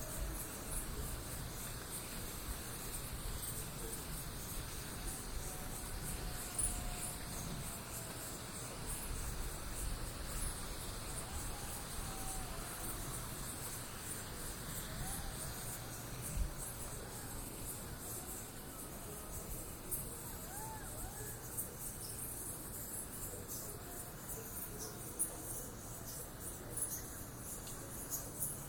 Unnamed Road, Mavrommati, Greece - Night dogs and Jackals
Night sounds: Insects, dogs and distant Jackals